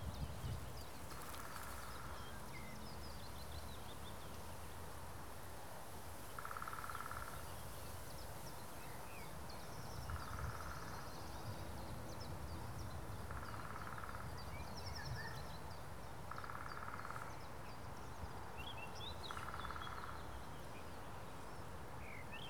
Suchy Las, forest clearing - forest clearing near railroad tracks
freight train passing. then deep forest ambience that somehow escaped being overwhelmed by urban sounds of the industrial district of Poznan.